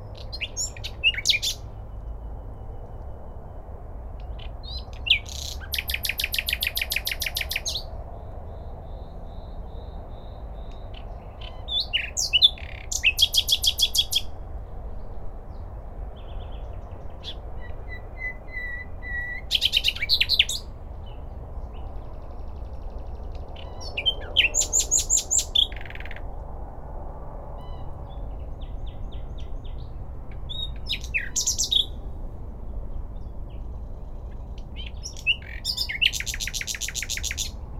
Přírodní park Hostivař-Záběhlice Praha, Česká republika - One or two midnight nightingales and one or two busses.
A midnight song of one, later two (or more?) nightingales mixes with late night public transportation in an rather absurd but quite typical suburban soundscape. I lived there for several years and liked that mood.wwwOsoundzooOcz
Prague-Prague, Czech Republic